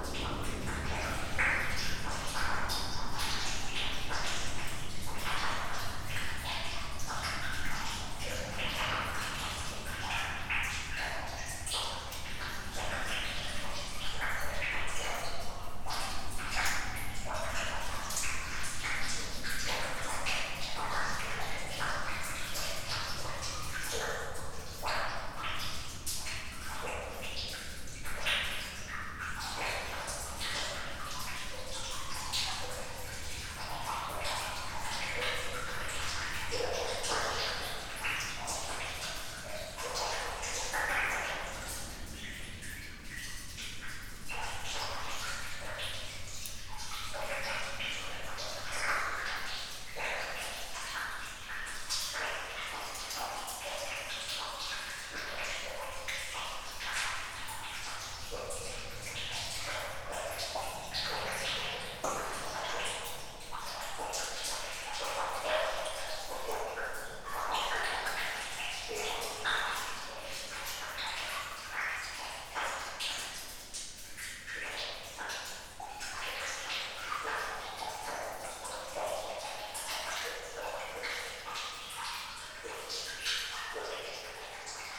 Esch-sur-Alzette, Luxembourg - Deep mine
Sounds of friends walking and after, general overview of the tunnel sounds. We are in the green layer, the most deep place of the mine. Probably nobody went in this place since 80 years, as it's far and difficult to access.